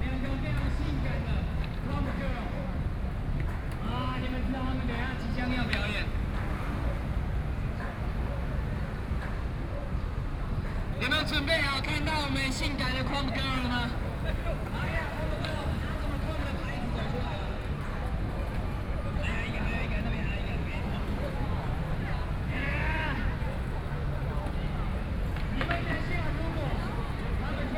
Taipei City Hospital - skateboarding
Plaza outside the hospital, Young people are skateboarding, Binaural recordings, Sony PCM D50 + Soundman OKM II
Wanhua District, Taipei City, Taiwan, 19 October 2013